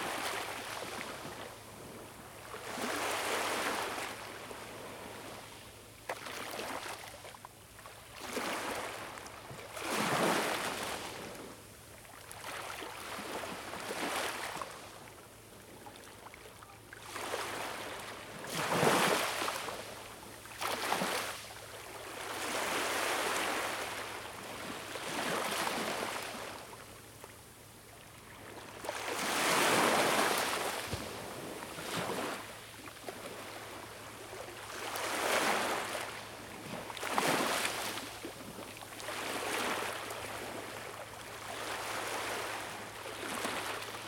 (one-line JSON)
{"title": "Göynük, Unnamed Road, Kemer/Antalya, Турция - The sound of the sea in the morning recorded next day", "date": "2021-07-14 06:04:00", "description": "The sound of the sea in the morning recorded next day with Zoom H2n", "latitude": "36.67", "longitude": "30.57", "altitude": "6", "timezone": "Europe/Istanbul"}